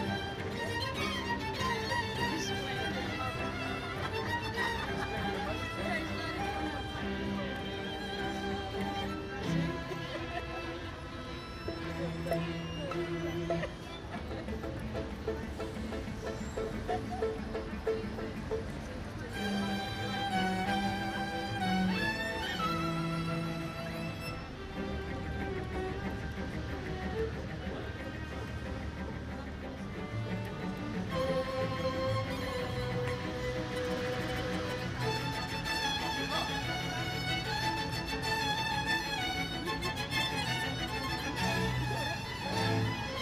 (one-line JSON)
{"title": "Danza ritual del fuego by a street orchestra", "date": "2010-09-11 16:45:00", "description": "Street orchestra plays Danza ritual del fuego by Manuel de Falla, Place Colette, Paris. Binaural recording.", "latitude": "48.86", "longitude": "2.34", "altitude": "47", "timezone": "Europe/Paris"}